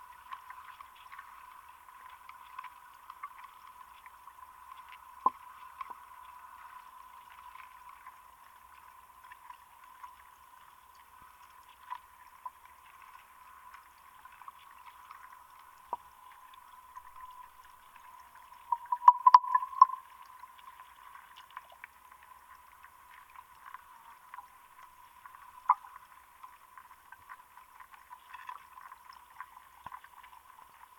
Aukštumala raised bog, Lithuania, dystrophic lake
The Aukštumala raised bog. Hydrophone in the little lake.
Klaipėdos apskritis, Lietuva